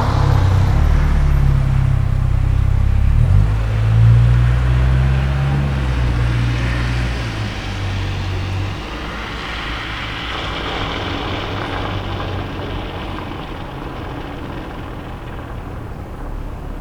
Berlin: Vermessungspunkt Friedelstraße / Maybachufer - Klangvermessung Kreuzkölln ::: 28.02.2012 ::: 02:11